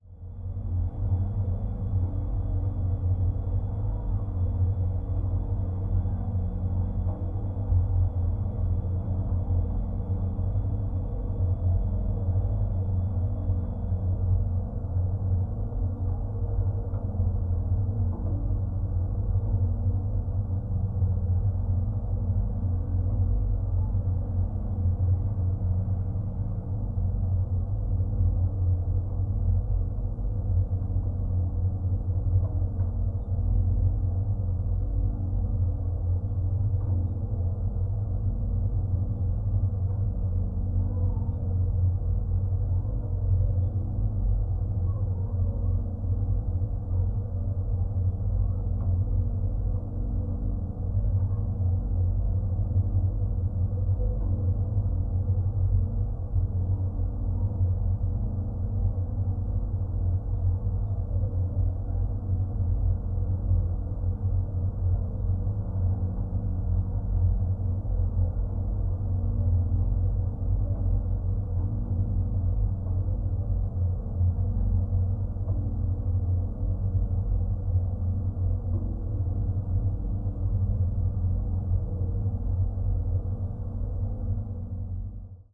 Coler Mountain Bike Preserve South Gateway Pavilion, Bentonville, Arkansas, USA - South Gate Pavilion Support
Geophone recording from metal support of South Gateway Pavilion at Coler Mountain Bike Preserve.
Benton County, Arkansas, United States, 8 October 2021